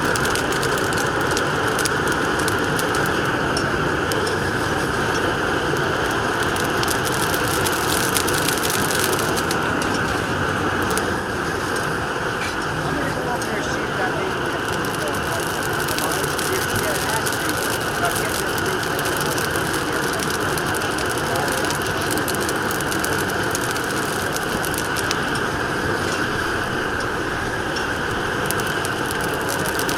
hatch chiles being roasted at farmers' market